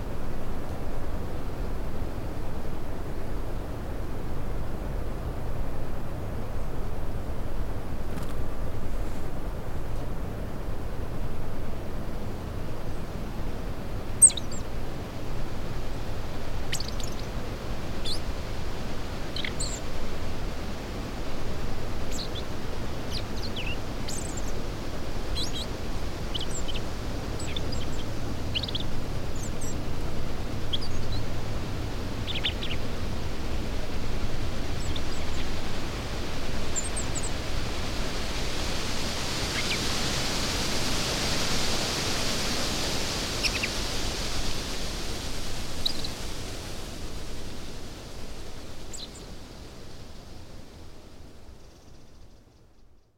Brownsea Island, Dorset, UK - Robin singing
Robin recorded on Brownsea Island Dorset